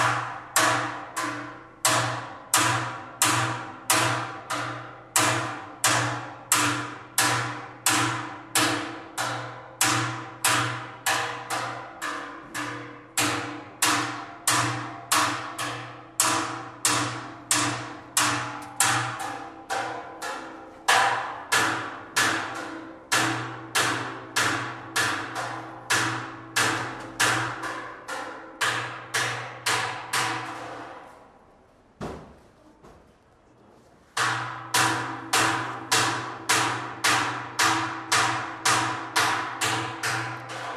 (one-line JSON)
{"title": "Sounds of unknown professions, coppersmith", "date": "2010-10-22 17:37:00", "description": "Professions only existing in the backyards of Istanbuls smallest streets, we hear, the coppersmith, thinning the metal of a giant kettle.", "latitude": "41.02", "longitude": "28.97", "altitude": "12", "timezone": "Europe/Istanbul"}